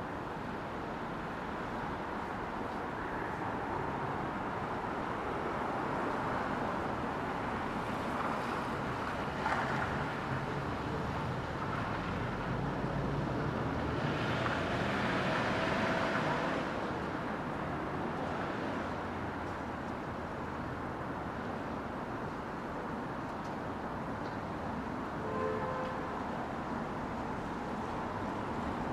Орджоникидзе ул., Москва, Россия - Near the cafe TAMANNO
Near the cafe TAMANNO (12 st4, Ordzhonikidze street). I sat on a bench and listened to what was happening around me. Frosty winter day, January 27, 2020. Recorded on a voice recorder.